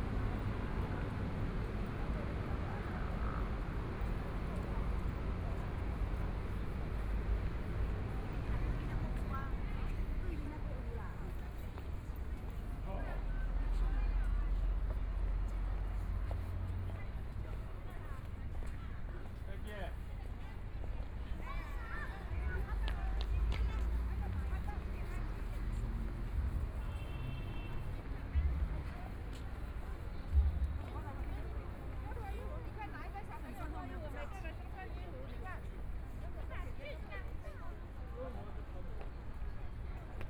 Taipei EXPO Park, Taiwan - Soundwalk
Follow the footsteps, Walking through the park, Environmental sounds, Traffic Sound, Aircraft flying through, Tourist, Clammy cloudy, Binaural recordings, Zoom H4n+ Soundman OKM II
Zhongshan District, Taipei City, Taiwan, 10 February 2014